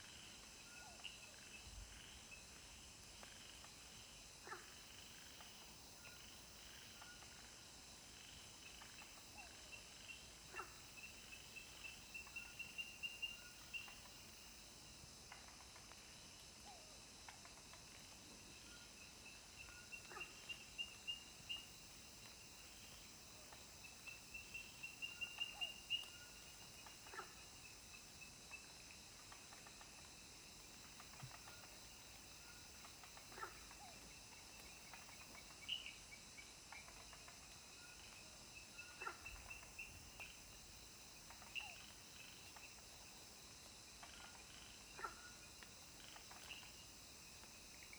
種瓜路 桃米里, Puli Township - bamboo forest
Sound of insects, Frogs chirping, bamboo forest
Zoom H2n MS+XY